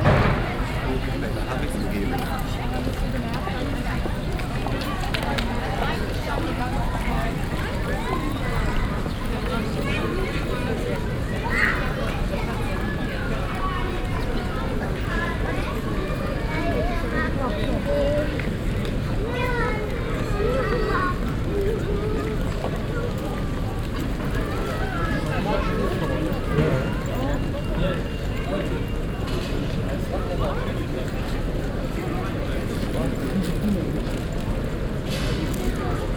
cologne, schildergasse, passanten
hochbetrieb am feitga nachmittag, gesprächsfetzen, stimmen, schritte
soundmap nrw: social ambiences/ listen to the people - in & outdoor nearfield recordings